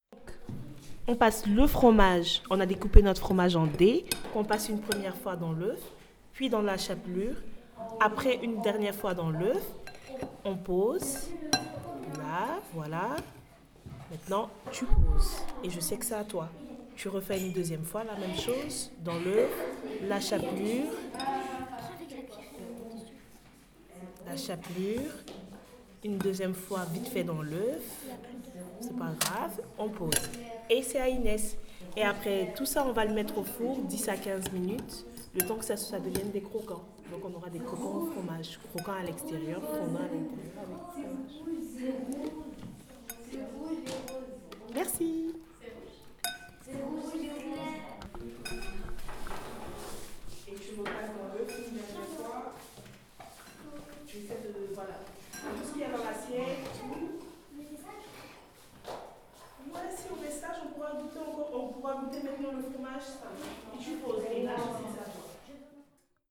Rue de Wattwiller, Strasbourg, France - kitchen lessons at primary school Ampère
Kitchen lesson in primary school Ampère.
6 April 2018